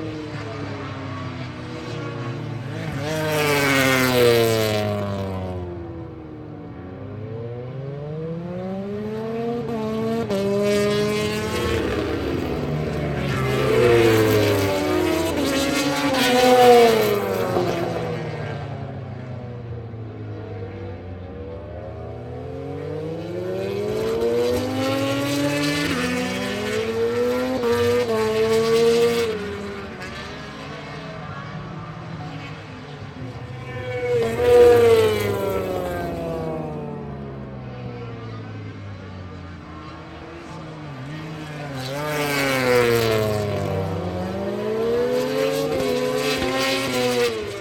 {"title": "Donington Park Circuit, Derby, United Kingdom - british motorcycle grand prix 2007 ... motogp practice 1 ...", "date": "2007-06-22 10:10:00", "description": "british motorcycle grand prix 2007 ... motogp practice 1 ... one point stereo mic to mini disk ...", "latitude": "52.83", "longitude": "-1.38", "altitude": "94", "timezone": "Europe/London"}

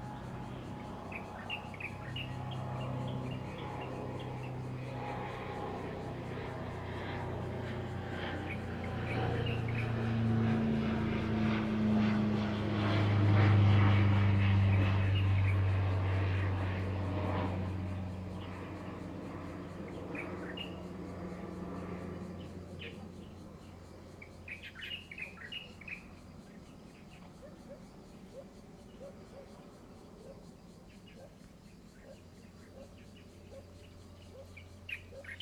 {"title": "卑南里, Taitung City - Birds and Aircraft", "date": "2014-09-09 08:43:00", "description": "Birdsong, Traffic Sound, Aircraft flying through, The weather is very hot\nZoom H2n MS +XY", "latitude": "22.78", "longitude": "121.11", "altitude": "36", "timezone": "Asia/Taipei"}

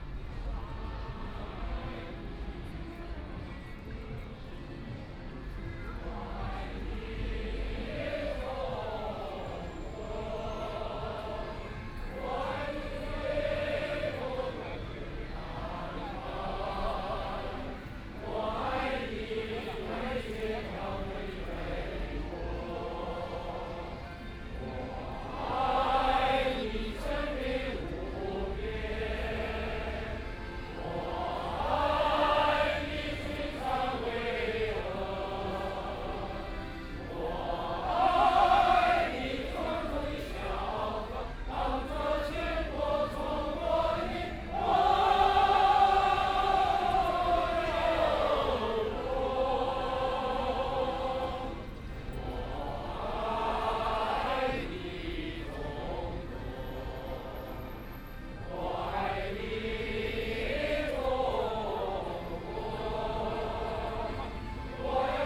{"title": "Lu Xun Park, Shanghai - Walk in the park", "date": "2013-11-23 11:53:00", "description": "Many people chorus together, Amusement mechanical sound, Binaural recording, Zoom H6+ Soundman OKM II", "latitude": "31.27", "longitude": "121.48", "altitude": "7", "timezone": "Asia/Shanghai"}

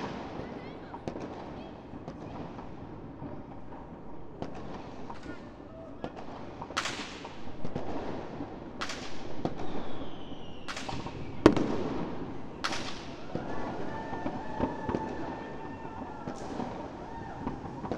{"title": "Erich-Weinert-Straße, Berlin, Deutschland - Silvester 2021", "date": "2022-01-01 00:19:00", "description": "This year it was more firecrackers and bangers instead of fireworks rockets.", "latitude": "52.55", "longitude": "13.42", "altitude": "63", "timezone": "Europe/Berlin"}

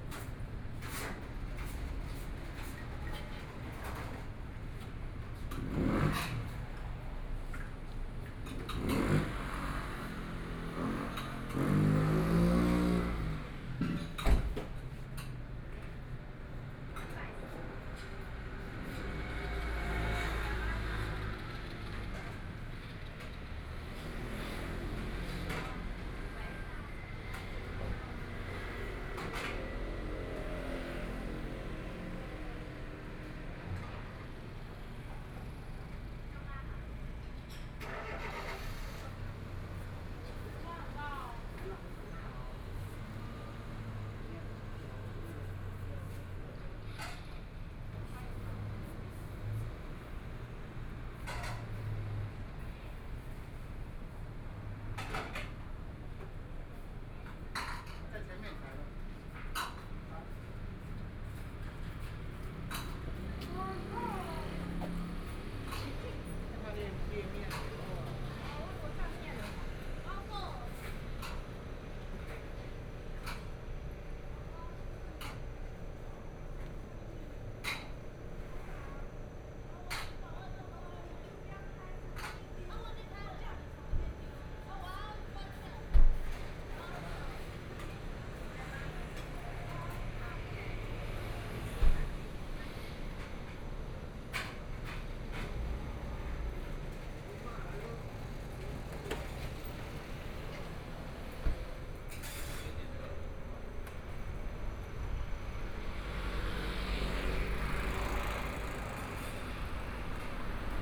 {"title": "Guangming Rd., Taitung City - Outside the restaurant", "date": "2014-01-15 18:33:00", "description": "Traffic Sound, Kitchen cooking sounds, Binaural recordings, Zoom H4n+ Soundman OKM II", "latitude": "22.76", "longitude": "121.15", "timezone": "Asia/Taipei"}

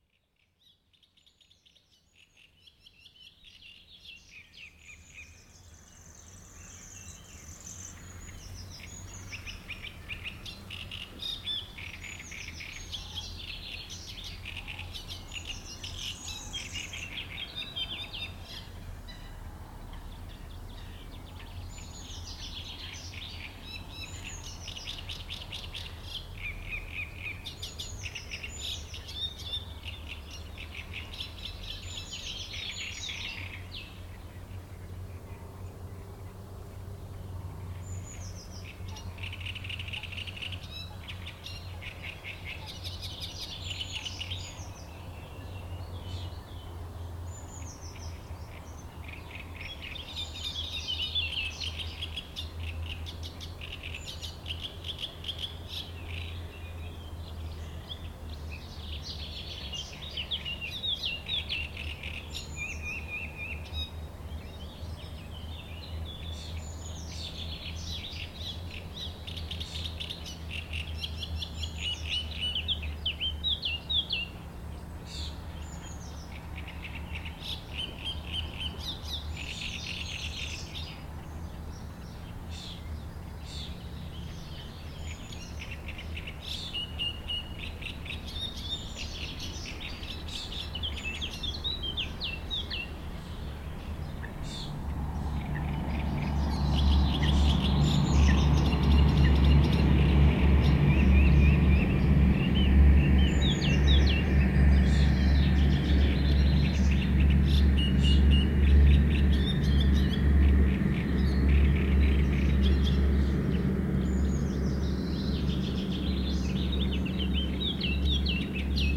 {"title": "plage de Chatillon, Chindrieux, France - Locustelle tachetée .", "date": "2004-05-15 10:00:00", "description": "Dans la roselière de la plage de Châtillon au Nord du lac du Bourget, une rare locustelle tachetée, rossignol, rousserole turdoïde, fauvette...", "latitude": "45.80", "longitude": "5.85", "altitude": "235", "timezone": "Europe/Paris"}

